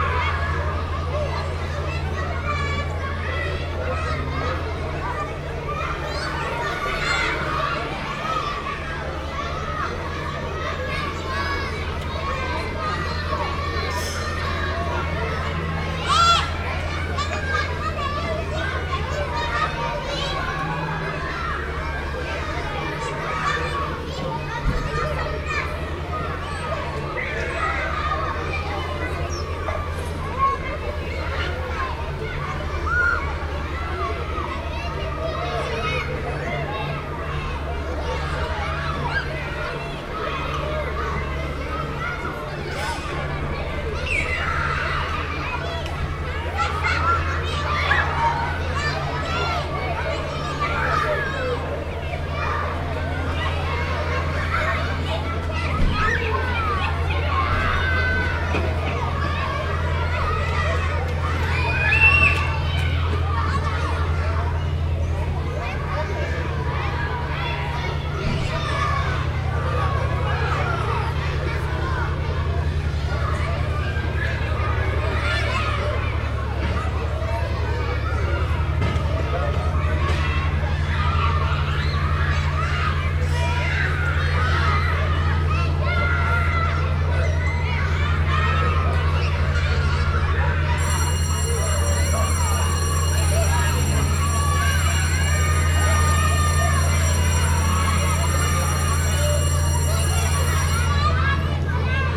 Le Pecq, France - School
Children are playing at school. At the backyard, the big drones of three industrial boats passing by on the Seine river.